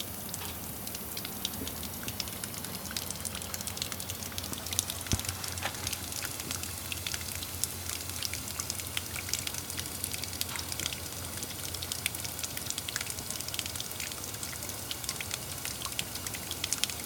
Serre, collège de Saint-Estève, Pyrénées-Orientales, France - Robinet qui fuit sous la serre d'horticulture
Preneur de son : Thierry